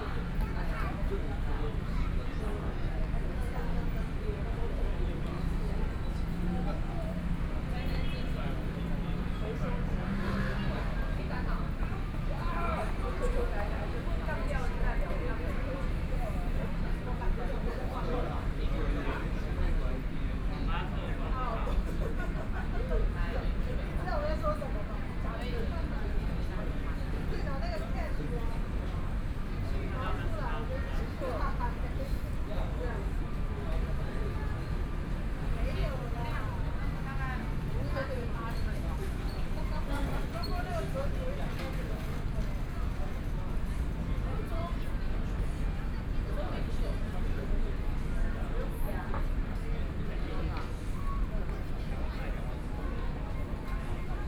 康樂里, Taipei city - Chat
Outdoor seating area and coffee, Traffic Sound, Environmental sounds, Pedestrian
Please turn up the volume a little
Binaural recordings, Sony PCM D100 + Soundman OKM II